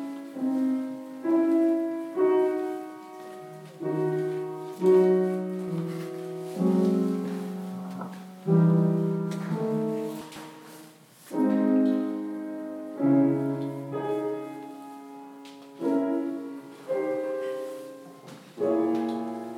Nida, Lithuania - Lutheran Church Interior
Recordist: Anita Černá
Description: Interior of the brick Lutheran Church. Piano playing and people inside the church. Recorded with ZOOM H2N Handy Recorder.